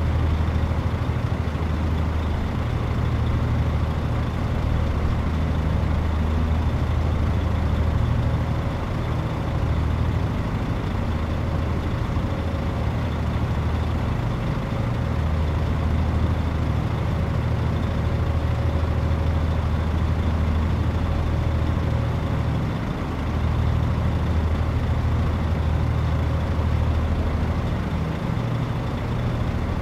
ferry over magellan strait, between trucks, wind SW 29km/h, ZOOM F1 / XYH-6 cap
The ferry between Punta Delegada and Bahia Azul is one of the connections to the Isla Grande de Tierra del Fuego over the Primera Angostura, the sound the Strait of Magellan. All goods traveling further south pass here, truck engines kept running all the time...
Provincia de Magallanes, Región de Magallanes y de la Antártica Chilena, Chile, 14 February